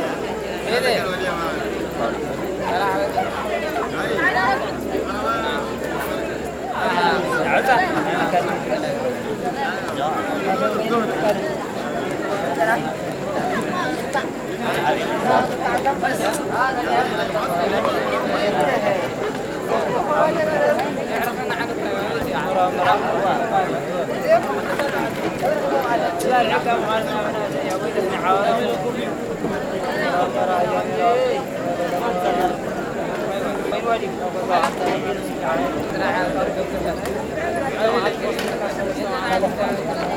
{"title": "Edaga, مصوع، Eritrea - massawa market", "date": "1998-06-26 19:16:00", "description": "massawa eritrea, it was early evening, hot and dark already and i stumbled upon this market full sellers customers and lights. The exact location i do not know", "latitude": "15.61", "longitude": "39.45", "altitude": "5", "timezone": "Africa/Asmara"}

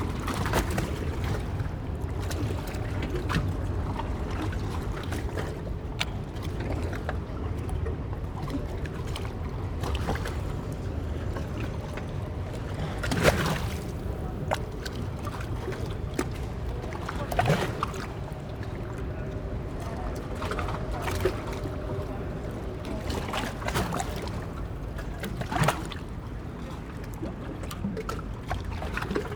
{"title": "Hightide waves slopping against the wall, Queen Street Place, London, UK - Hightide waves slopping against the embankment", "date": "2022-05-16 15:18:00", "description": "The Thames is a fast flowing river and tides rise and fall surprisingly quickly. At this point you are extremely close to the water and can feel strength of the current and its powerful flow.", "latitude": "51.51", "longitude": "-0.09", "altitude": "13", "timezone": "Europe/London"}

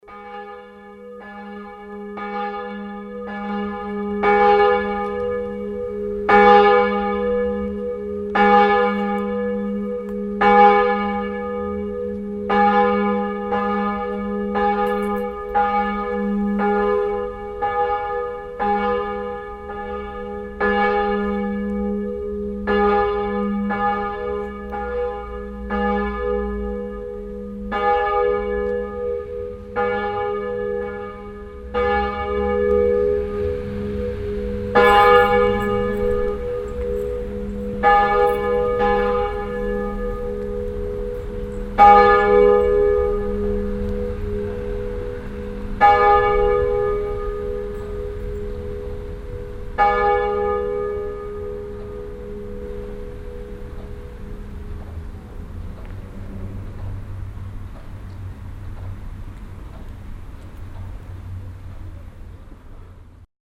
{"title": "velbert, friedrichstrasse, alte ev. kirche, glocken", "description": "mittagsglocken, sonntags im frühjahr 07\nsoundmap nrw: social ambiences/ listen to the people - in & outdoor nearfield recording", "latitude": "51.34", "longitude": "7.04", "altitude": "251", "timezone": "GMT+1"}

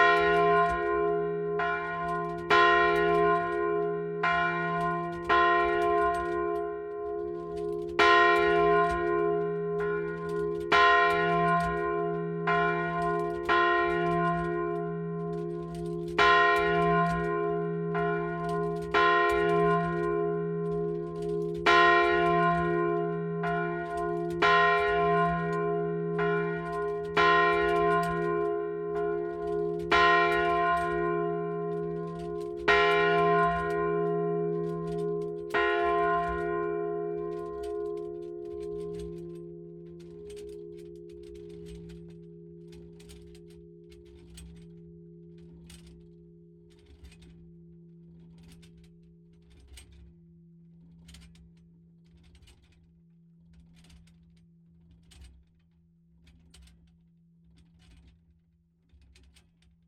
{"title": "Allée de la La Chartreuse, Neuville-sous-Montreuil, France - Neuville sous Montreuil - Angélus - volée", "date": "2020-05-29 12:00:00", "description": "Neuville sous Montreuil\nClocher de la chartreuse de Neuville\nAngélus - volée", "latitude": "50.47", "longitude": "1.79", "altitude": "34", "timezone": "Europe/Paris"}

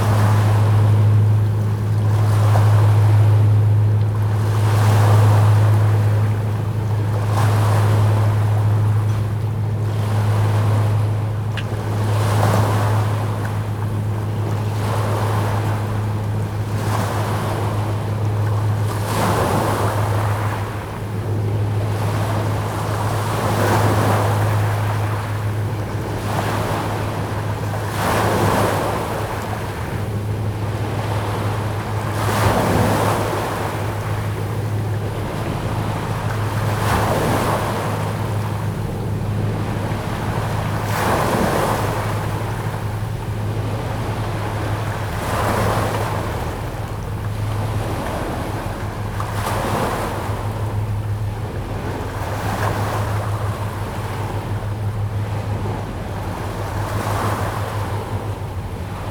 {"title": "淡水河, Tamsui Dist., New Taipei City - On the banks of the river", "date": "2017-01-01 16:30:00", "description": "On the banks of the river, The sound of river, Passenger ships\nZoom H2n MS+XY", "latitude": "25.18", "longitude": "121.43", "altitude": "9", "timezone": "GMT+1"}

